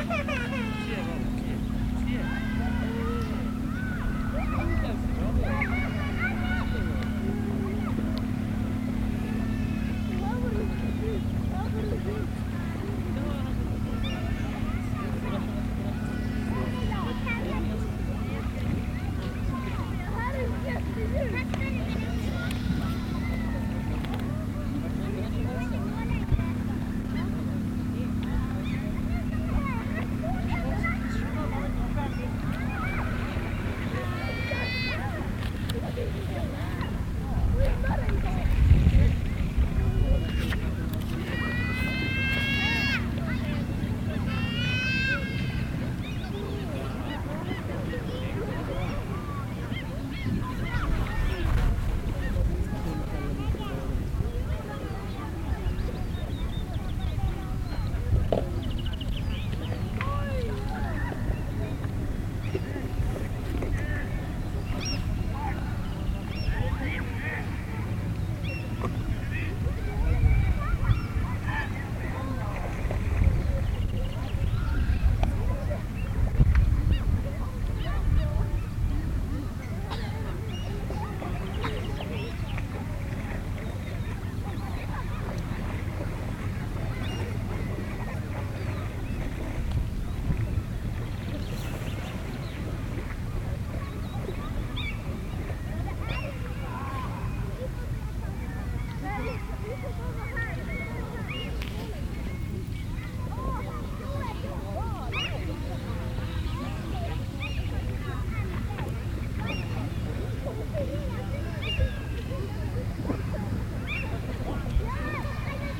Norrmjöle. Beach scene.
Beach scene. Children. Lesser Plover. Gulls. Talking. Reading (page-turning). Motor-boat keynote in background.